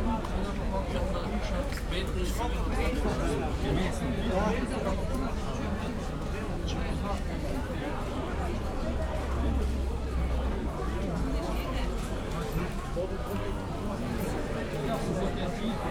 Maribor, Vodnikov Trg, market - cafe ambience
small cafe at the edge of the market, guests talking and busy market sounds
(SD702, DPA4060)